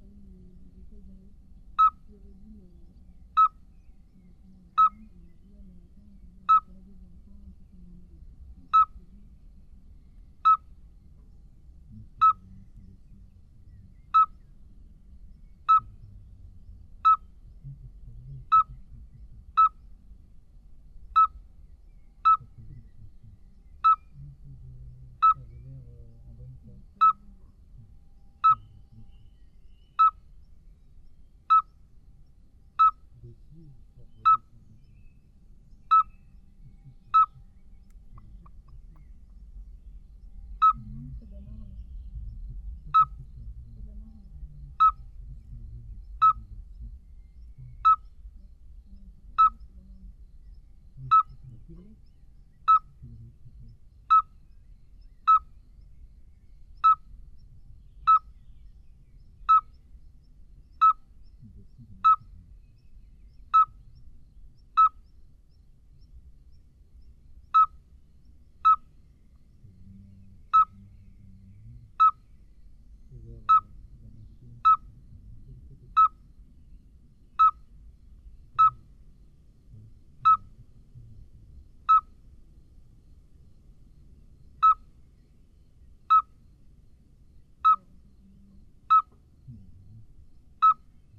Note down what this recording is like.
Below two rubbish bins, we heard two small Common midwife toad. It's a small frog which makes repetitive tuu tuu tuu tuu. Without experience, you could think it's a Eurasian Scops Owl. But, below a rubbish bin, this could be a problem to find that kind of bird ! The midwife toad has extremely beautiful gold silver eyes. I put the recorder near the frogs, but unfortunately people is speaking at the entrance of the cemetery.